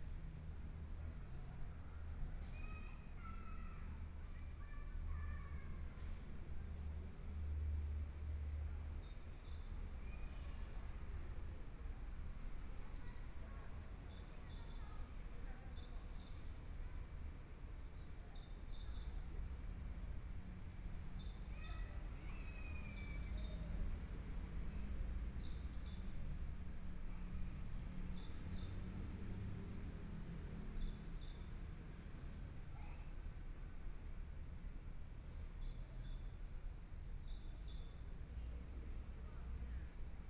JinZhou Park, Taipei City - in the Park
Sitting in the park, Environmental sounds, Parents and kids, Binaural recordings, Zoom H4n+ Soundman OKM II